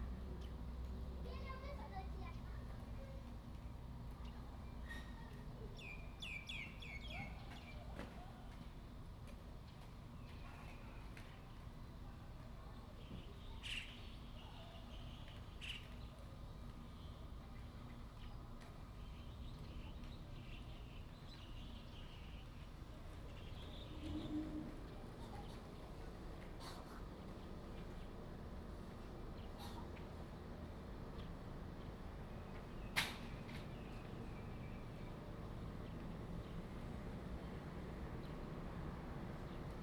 In the square, in front of the temple, Small fishing village
Zoom H2n MS+XY
Huxi Township, 澎14鄉道, 21 October 2014